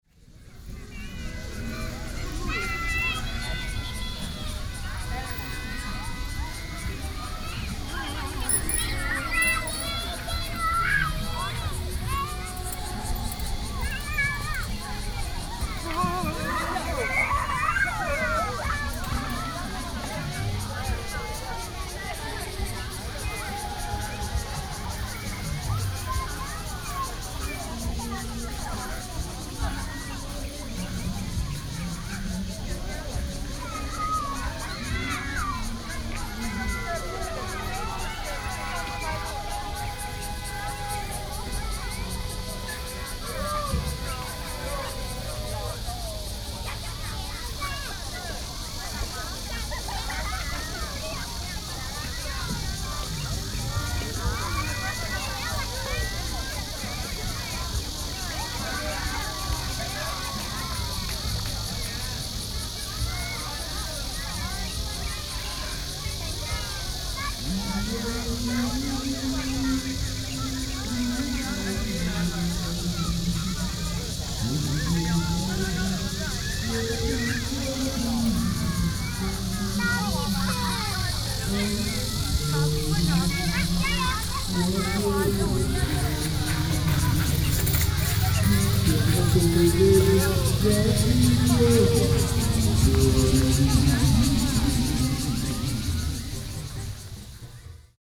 Guanhai Blvd., Bali Dist. - Children's playground
Cicadas cry, Traffic Sound, hot weather, Children's playground
Sony PCM D50+ Soundman OKM II